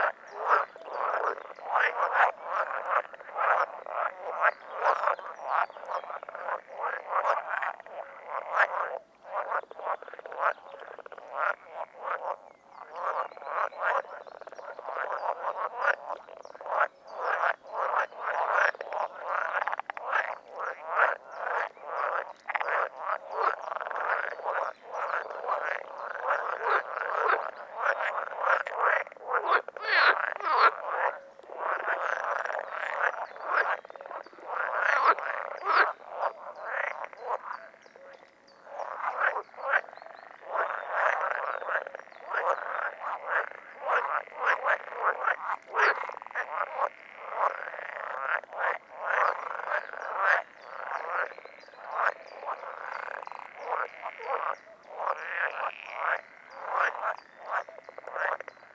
frogs chorus
amazing sounds of frogs weeding:)